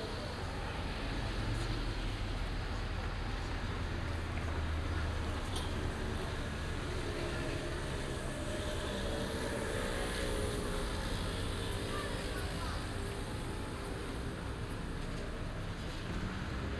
Chinatown, Den Haag, Nederland - Gedempte Gracht
Binaural recording on a busy Saturday afternoon.
Zoom H2 with Sound Professionals SP-TFB-2 binaural microphones.